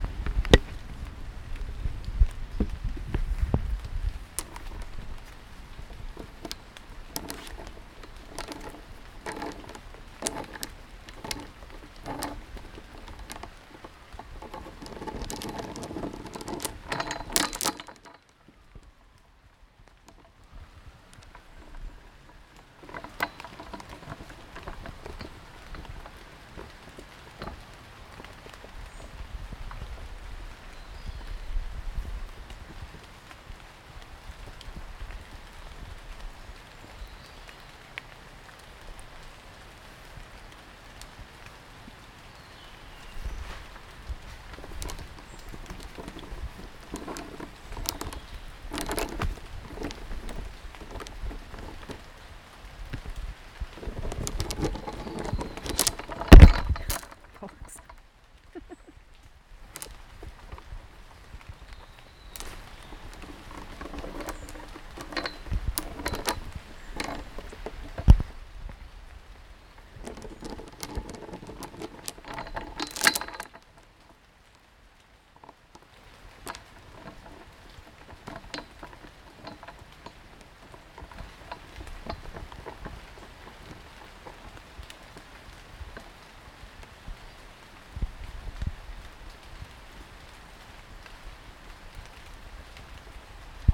Walking Festival of Sound
13 October 2019
Abandoned playground. Twisting on metals swings.